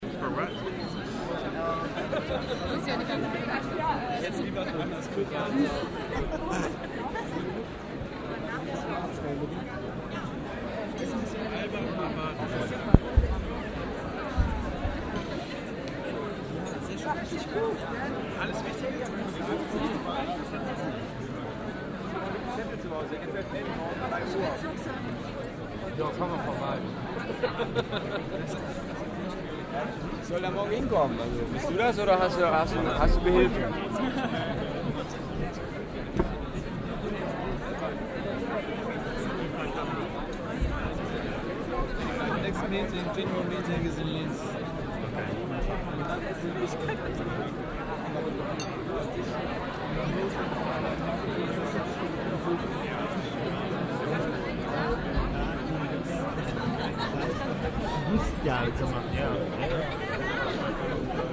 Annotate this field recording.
vernissagepublikum am buffet - emaf festival 2008, project: social ambiences/ listen to the people - in & outdoor nearfield recordings